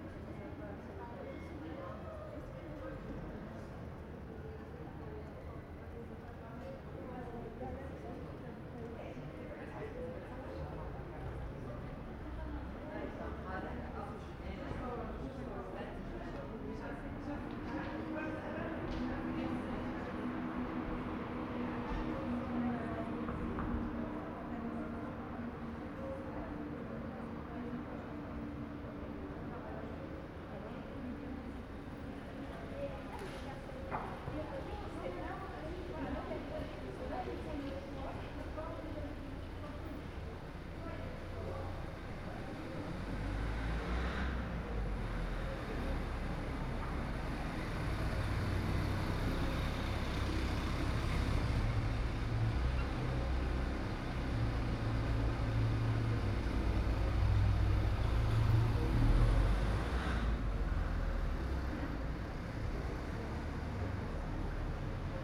Street sounds recorded from the window sill on the second floor.
France métropolitaine, France, 28 August 2021